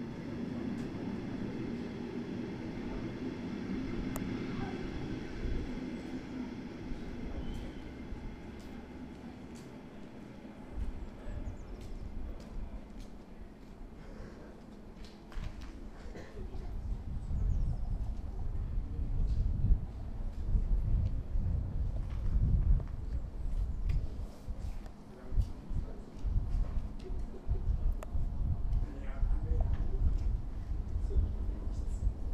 Wannsee, Deutschland - Sbahn-Stop
on track, waiting